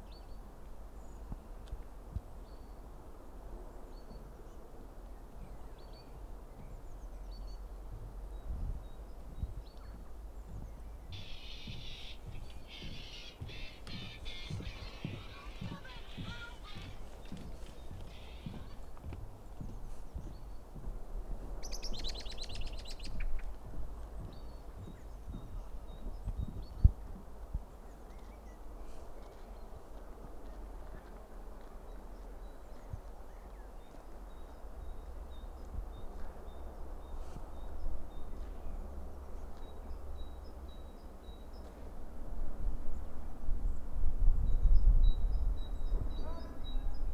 Olsztyn, Jezioro Długie - 'Dlugie' lake